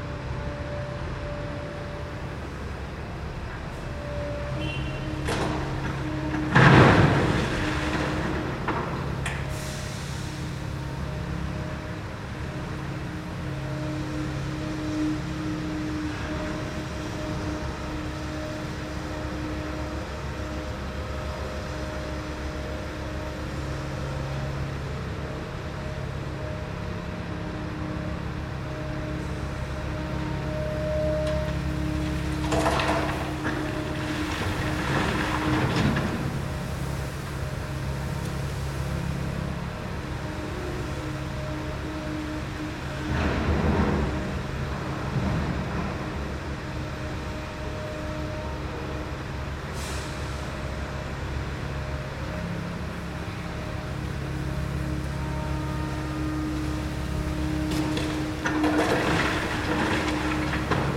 Midnight construction noise, Istanbul
construction noise out my window at midnight